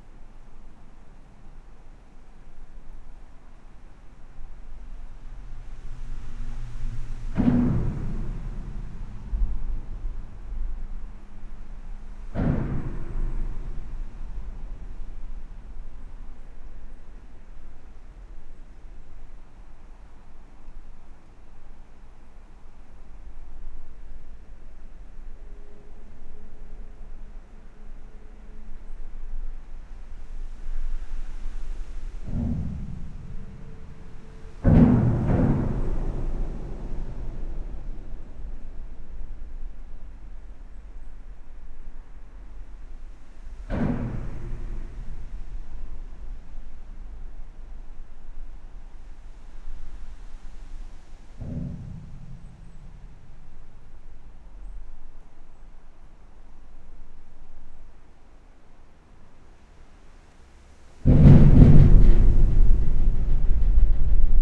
Dinant, Belgium - Charlemagne bridge
Recording of the Charlemagne bridge from the outside. The impact noises come from the seals.
29 September, ~10:00